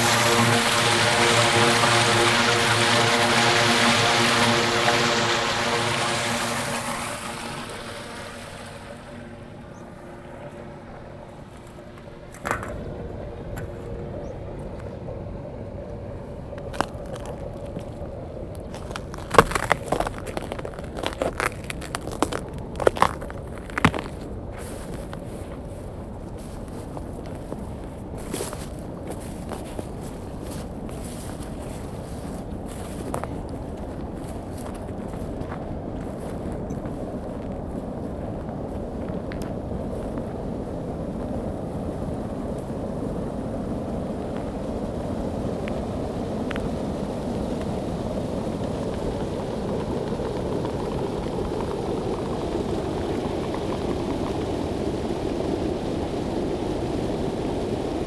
wastepond, power station Počerady, Czech Republic - Maelstrom
vír u stavidla odkaliště v bývalých Počeradech, dnes ČEZ elektrárna.nahráno na Zoom H2N u odvětrávací roury.
Výškov, Czech Republic